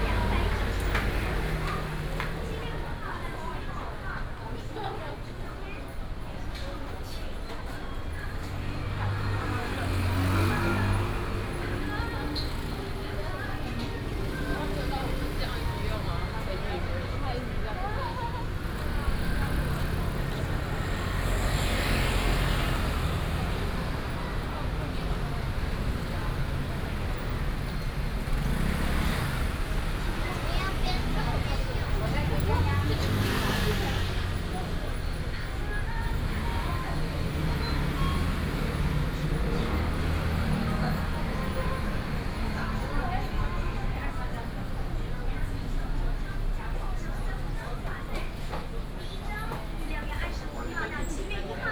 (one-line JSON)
{"title": "通化街, Da'an District - walking in the Street", "date": "2015-06-26 20:37:00", "description": "walking in the Street, Traffic noise, Various shops", "latitude": "25.03", "longitude": "121.55", "altitude": "17", "timezone": "Asia/Taipei"}